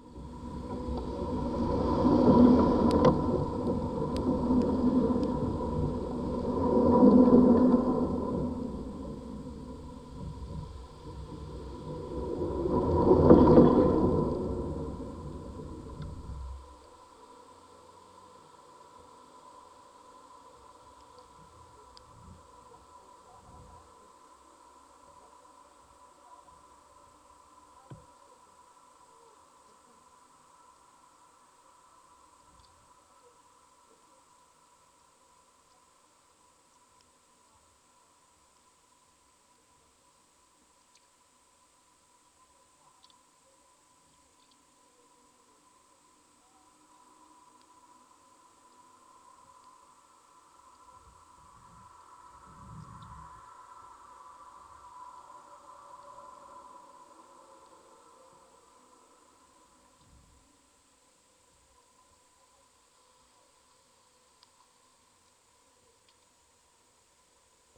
Lithuania Dubingiai, on the bridge
recorded with contact microphone on the one of the oldest lithuanian wooden bridge.
7 August 2011, ~20:00